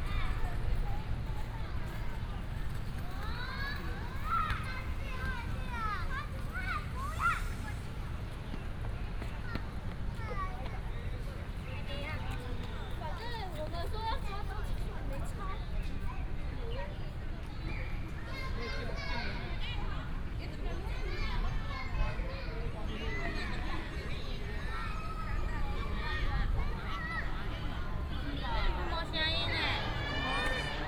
重慶公園, Banqiao Dist., New Taipei City - Walking through the park
Walking through the park, Traffic sound, Child, sound of the birds